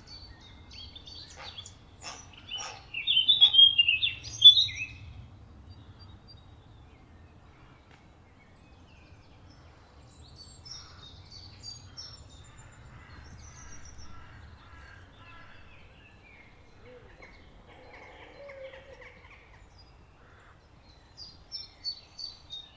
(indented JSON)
{
  "title": "Shillingstone, Dorset, UK - Herons Nesting",
  "date": "2012-04-03 12:49:00",
  "description": "Heron's nesting. Recorded on a Fostex FR-2LE Field Memory Recorder using a Audio Technica AT815ST and Rycote Softie.",
  "latitude": "50.92",
  "longitude": "-2.26",
  "altitude": "66",
  "timezone": "Europe/London"
}